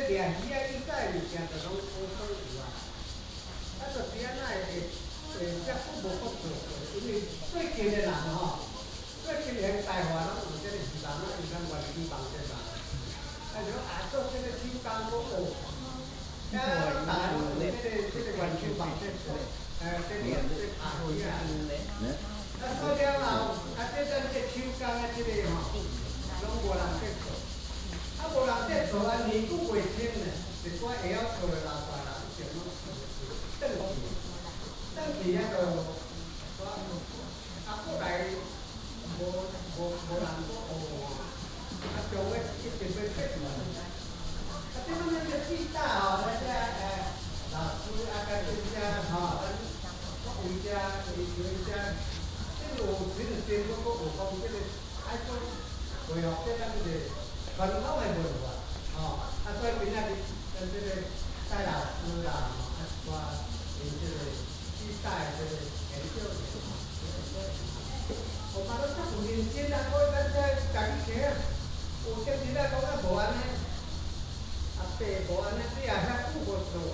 Paper Dome, 桃米里 Puli Township - Press conference
Old people introduce traditional fishing tools, Cicadas sound, Traffic sound